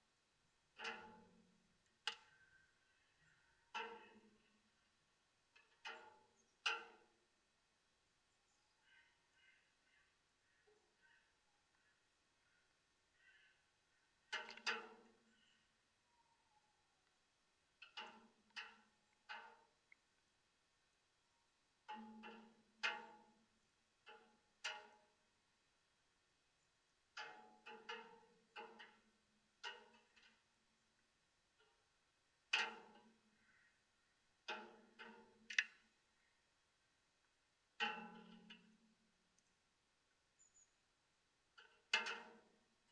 Lithuania, Vilnius, rain drops on metal
some kind of metallic watchtower and rain on it...recorded with contact mics
6 November 2012, 12:50